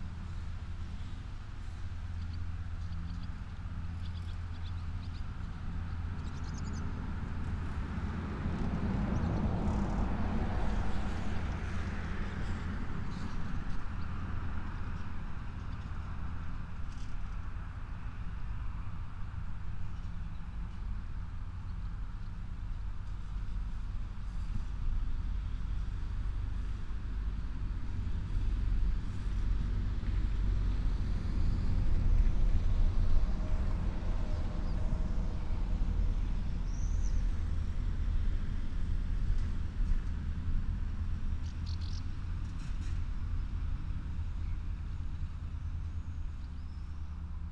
Midday near main street of Kintai
Šilutės rajono savivaldybė, Klaipėdos apskritis, Lietuva, 21 July 2022